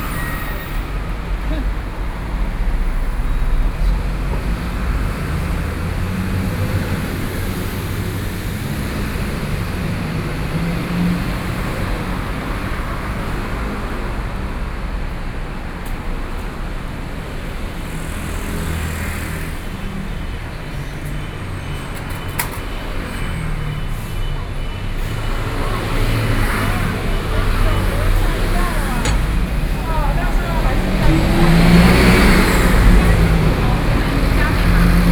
Sec., Minsheng E. Rd., Songshan Dist., Taipei City - Traffic noise
29 October 2012, 15:13